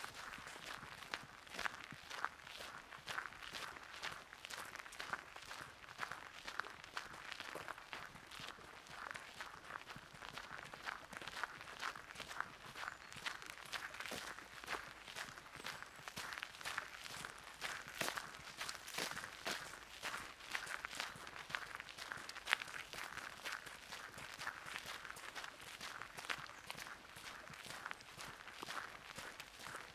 Sanssouci Park, An der Orangerie, Potsdam, Germany - Walk